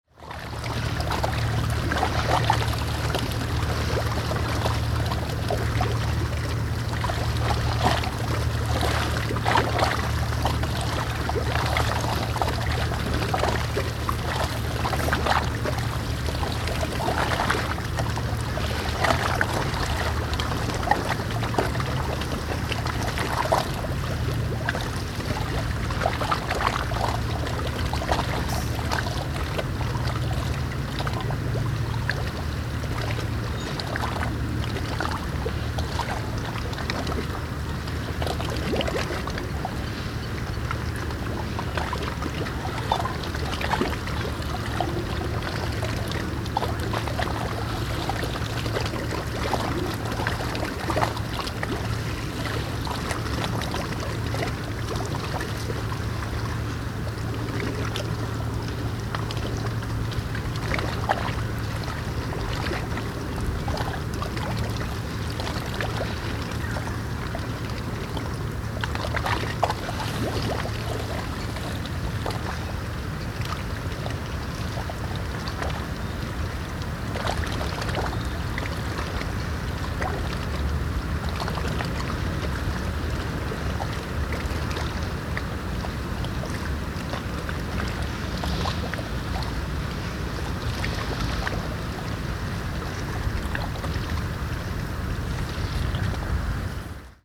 Schiemond, Rotterdam, Nederland - Lloydkade.
The sound of small waves against the dock.
Binaural recording.